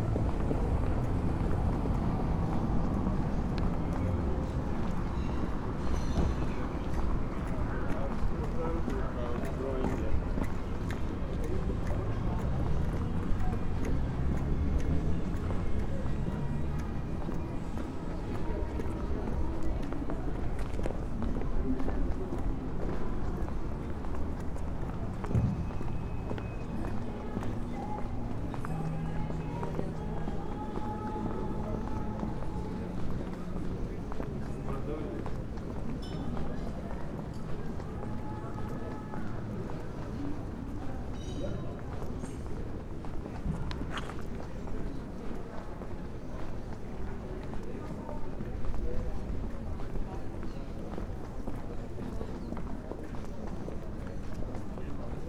Lithuania, Vilnius, a walk
a walk through machine-free part of Ausros Vartai street
Vilnius district municipality, Lithuania, 12 September 2012, 13:05